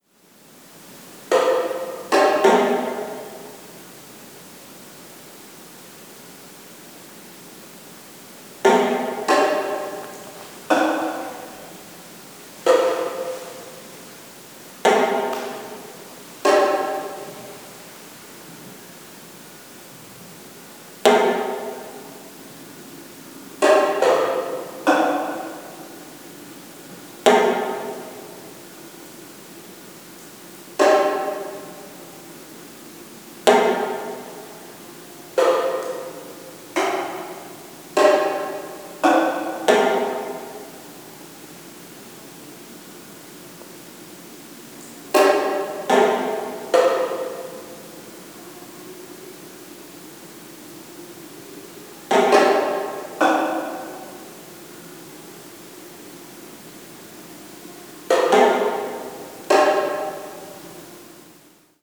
berlin - liniendrops
drops, exhibition, mmx, linienstrasse, membrane